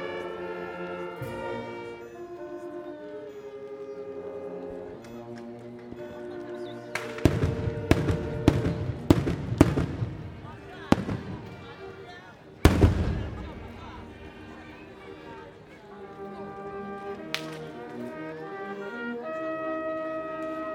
Xagħra, Malta - fiesta
summer fiesta in gozo/malta
a bit strange, fireworks are during the day